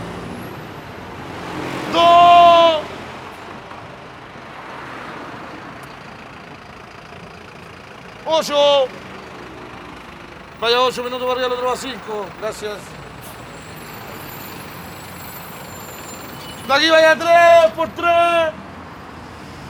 The Sapo in Chile is the guy who announce the time between two buses of the same line, so they can slow down or speed up to get more passengers. The bus driver give them a tip for their help. You can find sapo at different bus station in the cities of Chile. Here is Andres, a sapo from Reloj de Flores, Viña del Mar. This sounds as been recorded for the project El Placer de Oír, a workshop of sound recording for blind people who choose the sound they wanted to listen to, and present them in a sound installation in the museum Centex.